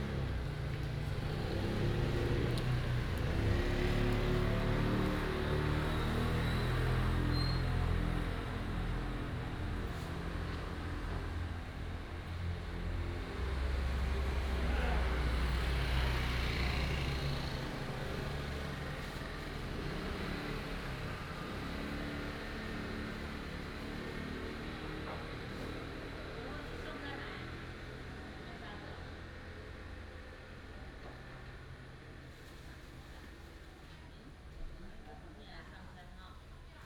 Chenggong St., Emei Township - small village
Small village, Small village market area, traffic sound, Binaural recordings, Sony PCM D100+ Soundman OKM II